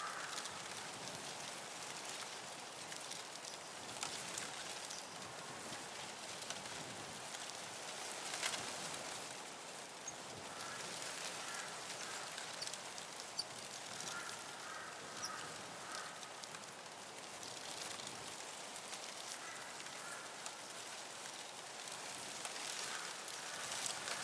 A windy morning blowing the reeds by the Simpson's Gap waterhole with Crows flying overhead - DPA 4060 pair, Zoom H4n
3 October 2015, 07:00